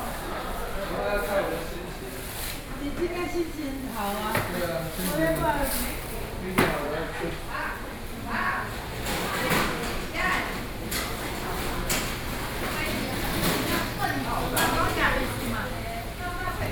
Jingmei St., Wenshan Dist., Taipei City - Traditional markets